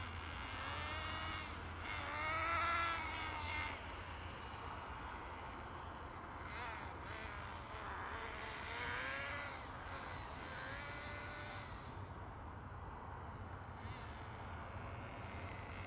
Soboli, Croatia - mad little car